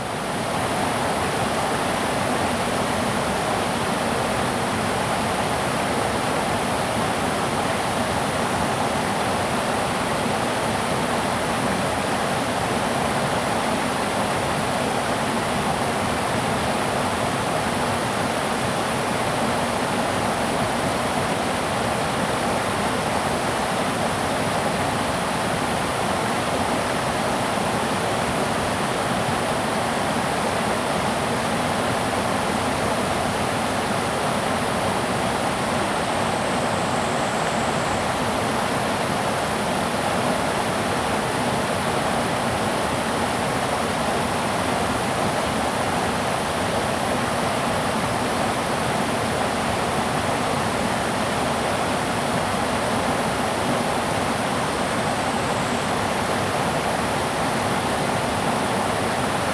玉門關, 種瓜坑, Puli Township - small waterfall
streams, small waterfall
Zoom H2n MS+ XY
Nantou County, Taiwan, 18 May 2016